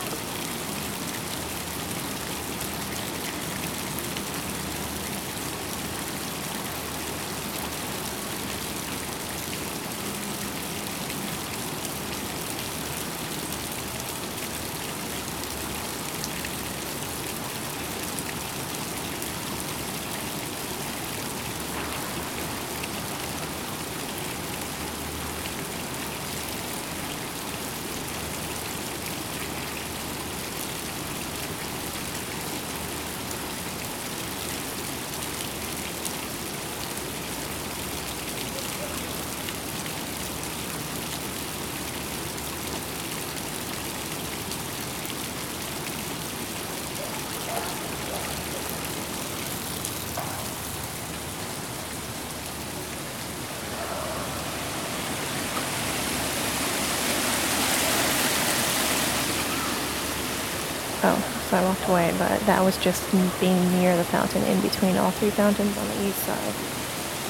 Lake Shore East Park East Water Garden In between Fountains
Recorded on a zoom H4N. This is the sound when standing in between the fountains on the quieter East Water Gardens part, walking around the fountain near the end.